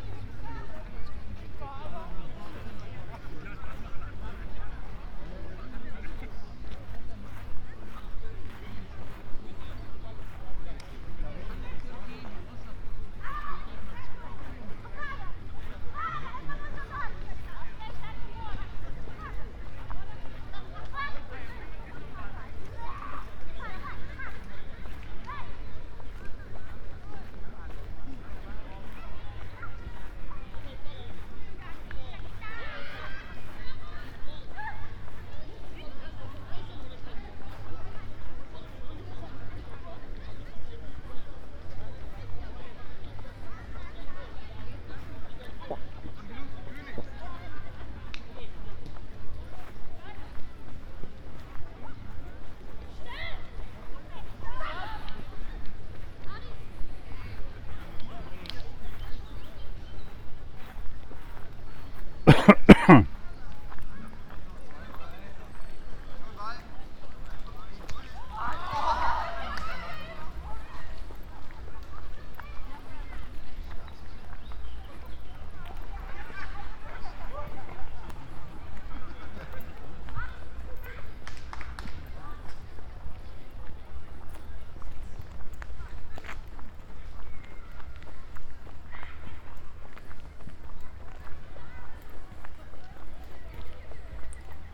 Ziegelwiese Park, Halle (Saale), Germania - WLD2020, World Listening Day 2020, in Halle, double path synchronized recording:A
Halle_World_Listening_Day_200718
WLD2020, World Listening Day 2020, in Halle, double path synchronized recording
In Halle Ziegelwiese Park, Saturday, July 18, 2020, starting at 7:48 p.m., ending at 8:27 p.m., recording duration 39’18”
Halle two synchronized recordings, starting and arriving same places with two different paths.
This is file and path A: